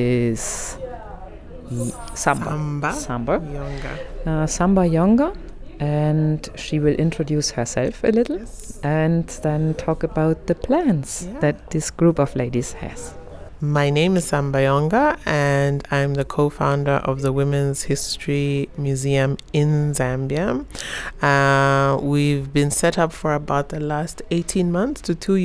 Lusaka National Museum, Lusaka, Zambia - Womens contribution to Zambian history and culture...
we are in the Lusaka National Museum... on invitation of Mulenga Kapwepwe, i had just been able to join a discussion of a resourceful group of women, among them artists, bloggers, writers, an architect, a lawyer…; they belong to a Cooperative of ten women who are the makers and movers of what is and will be the Museum of Women’s History in Zambia. After the meeting, I managed to keep Mulenga and Samba Yonga, the co-founders of the Women’s History Museum for just about long enough to tell us how this idea and organisation was born, what’s their mission and plans and how they will go about realizing their ambitious plans of inserting women’s achievements into to the gaping mainstream of history… (amazing work has been done since; please see their website for more)
Lusaka Province, Zambia, 18 June 2018